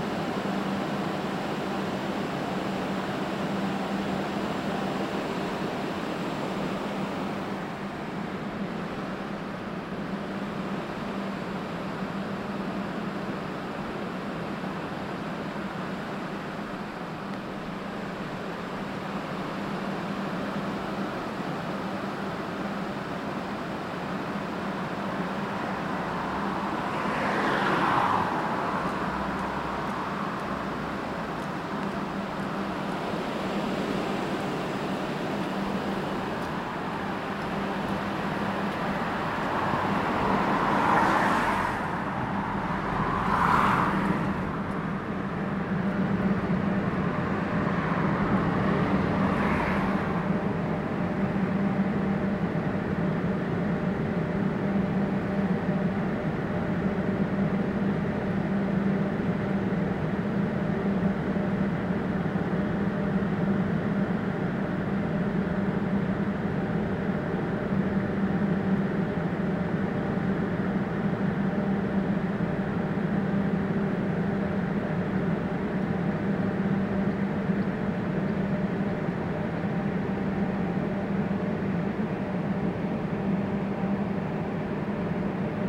Newington Rd, Newcastle upon Tyne, UK - Industrial units
Walking Festival of Sound
13 October 2019
Industiral units, electrical noise/hum, 3 in a row.